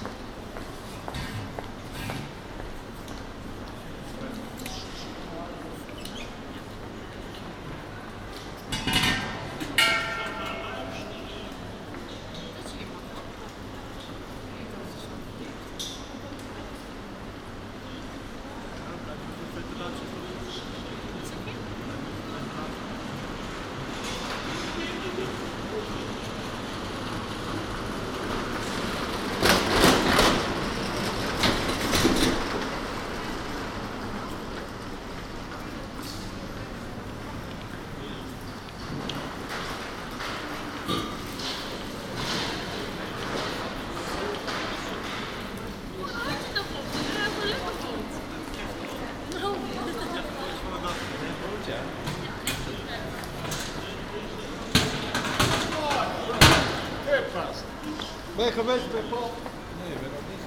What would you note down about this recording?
Binaural Recording. General atmosphere in The Hagues beautiful Passage. Recorded as part of "The Hague Sound City" for State-X/Newforms 2010.